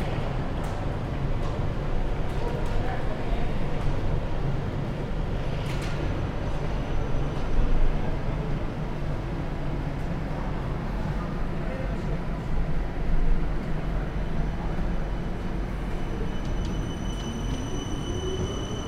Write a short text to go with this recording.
Prominent onset, glides of tram wheels, people. Recording gear: Zoom F4 field recorder.